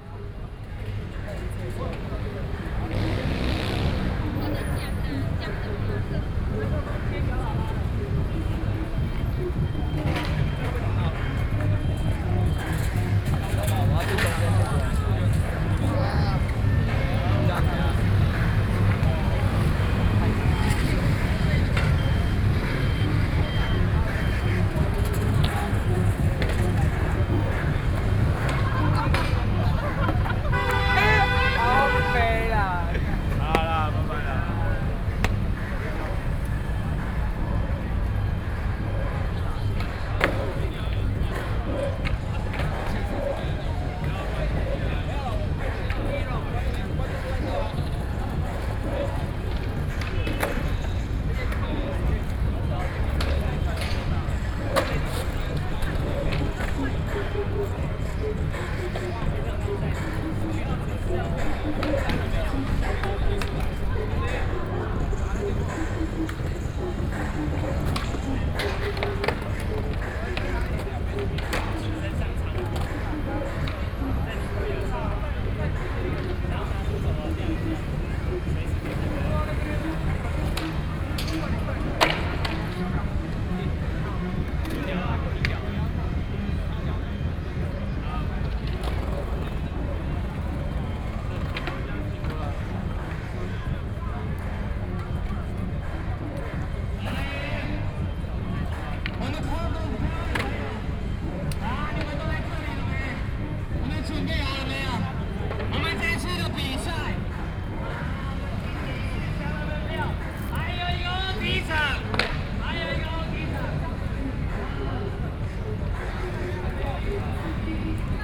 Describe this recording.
Plaza outside the hospital, Young people are skateboarding, Binaural recordings, Sony PCM D50 + Soundman OKM II